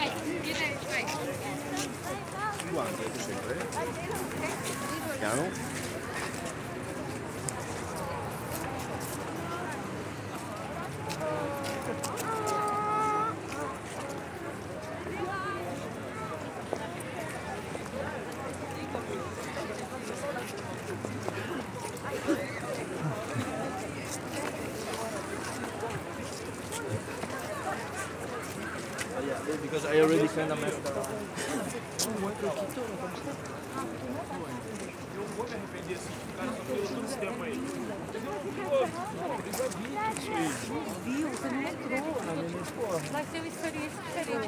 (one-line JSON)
{"title": "Paris, France - Tourists in front of the cathedral", "date": "2019-01-02 16:00:00", "description": "A lot of tourists waiting in front of the Notre-Dame cathedral, some people giving food to the doves, a few people joking.", "latitude": "48.85", "longitude": "2.35", "altitude": "36", "timezone": "GMT+1"}